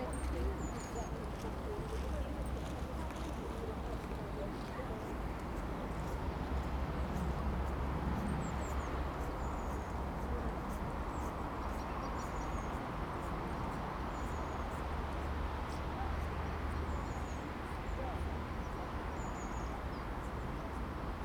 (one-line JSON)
{"title": "Contención Island Day 37 outer south - Walking to the sounds of Contención Island Day 37 Wednesday February 10th", "date": "2021-02-10 10:11:00", "description": "The Poplars The High Street The Great North Road\nWalkers\nrunners\ncyclists\nin the snow\nGulls stand on the frozen lake\nto lift\nand move\nto the prospect of food\nCarefully balancing his cappuccino\na young man squats\nto heel the lake ice", "latitude": "54.99", "longitude": "-1.62", "altitude": "58", "timezone": "Europe/London"}